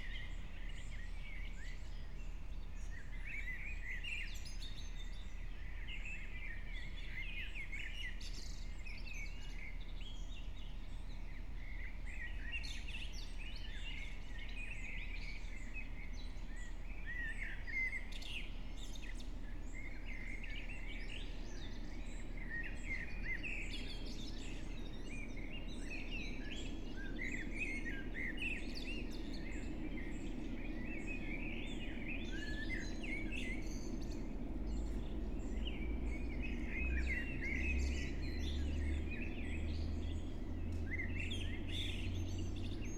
Brno, Lužánky - park ambience
04:00 Brno, Lužánky
(remote microphone: AOM5024/ IQAudio/ RasPi2)
Jihovýchod, Česko, 27 June 2021, 04:00